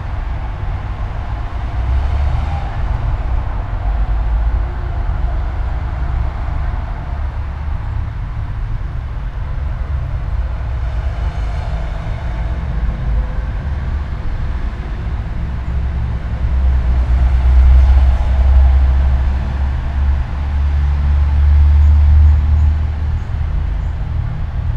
all the mornings of the ... - sept 2 2013 monday 08:50
2 September 2013, 08:50